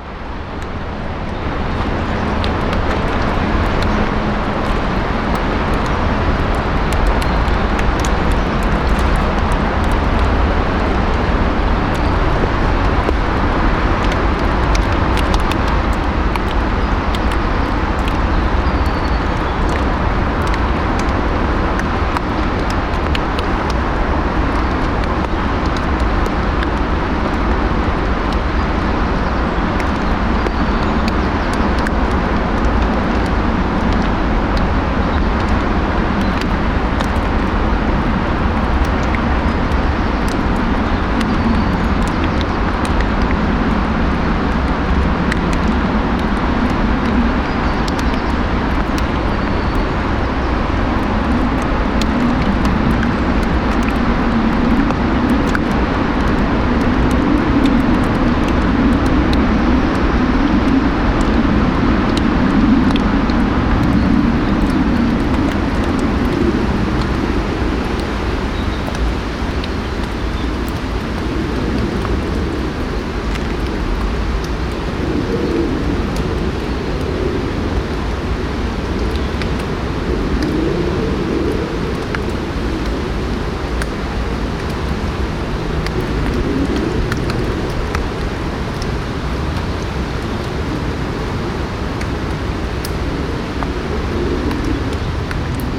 erkrath, neandertal, steilwand
regen an steiler steinwand, flugzeugüberflug, morgens
soundmap nrw:
social ambiences/ listen to the people - in & outdoor nearfield recordings
neanderthal